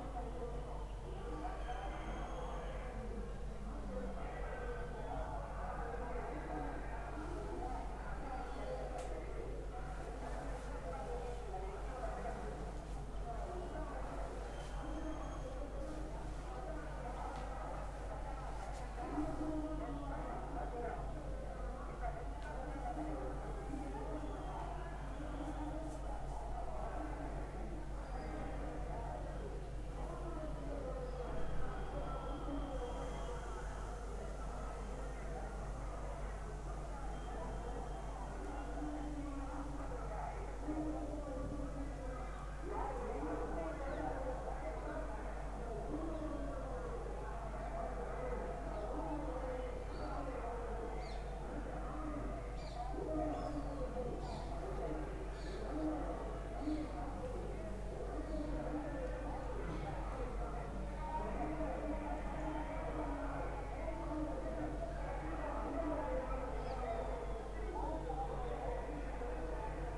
recorded in my hotel room - there were numerous political campaigns going on simultaneously in the town - the sound scape was fantastic!
recorded november 2007
Thanjavur, Tamil Nadu, India